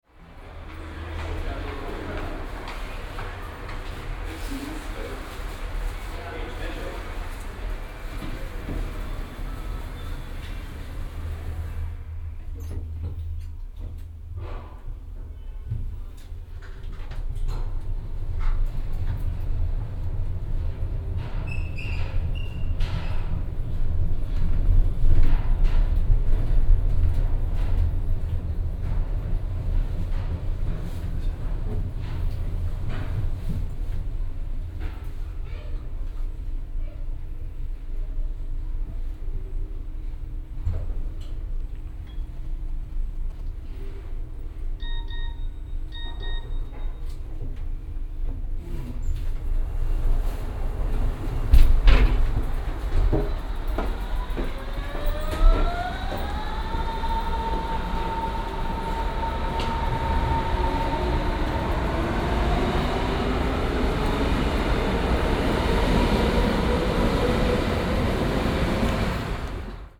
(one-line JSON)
{"title": "S+U Innsbrucker Platz - station elevator", "date": "2008-09-10 16:30:00", "description": "10.09.2008 16:30\nS + U Bahn Innsbrucker Platz, elevator (moves diagonally) up to the train platform.", "latitude": "52.48", "longitude": "13.34", "altitude": "37", "timezone": "Europe/Berlin"}